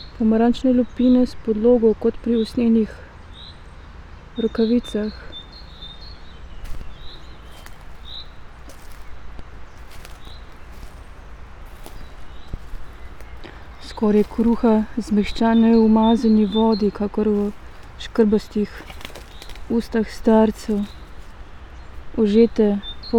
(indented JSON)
{
  "title": "little island, river drava, melje - walking, reading poem",
  "date": "2014-04-06 14:20:00",
  "description": "fragment from a reading session, poem Smetišče (Dubrište) by Danilo Kiš\nthis small area of land is sometimes an island, sometimes not, depends on the waters; here are all kind of textile and plastic pieces, hanging on branches, mostly of poplar trees and old willows, so it is a nice place to walk and read a poem from Danilo Kiš, ”Rubbish Dump\"",
  "latitude": "46.56",
  "longitude": "15.68",
  "altitude": "247",
  "timezone": "Europe/Ljubljana"
}